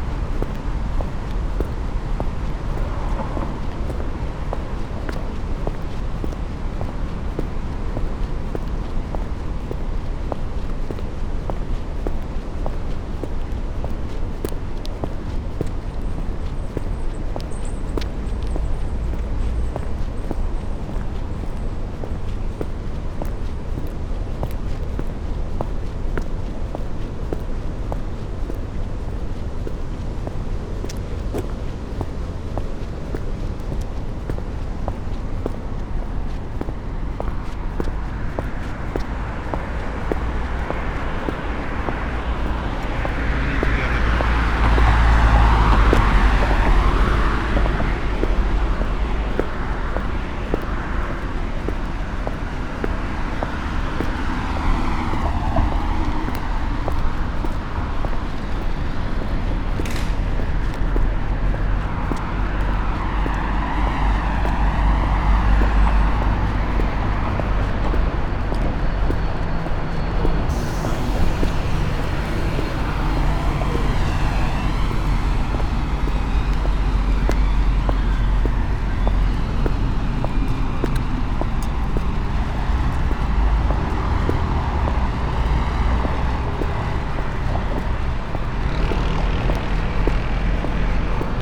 river Spree canal, Unterwasserstraße, Berlin, Germany - night, water fall, walking
river flows in the opposite direction here, night crows
Sonopoetic paths Berlin
3 September 2015